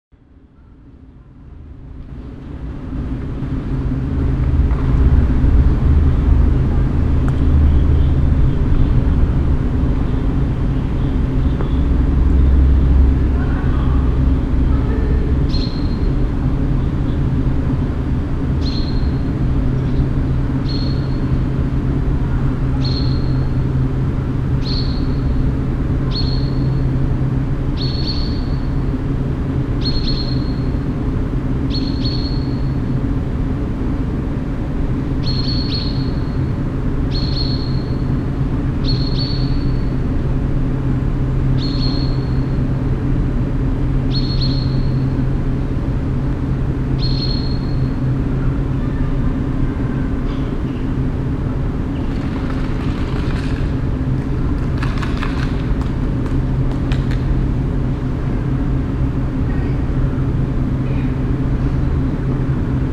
stereo okm aufnahme morgens, lüftung und taubenflug
soundmap nrw: social ambiences/ listen to the people - in & outdoor nearfield recording